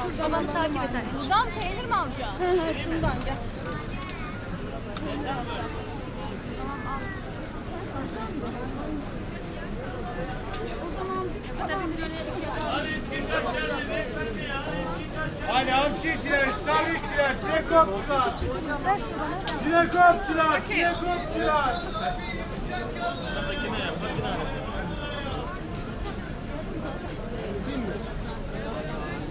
Istanbul spice market soundwalk
recording of the dealers at the spice market, early evening in November.
Fatih/Istanbul Province, Turkey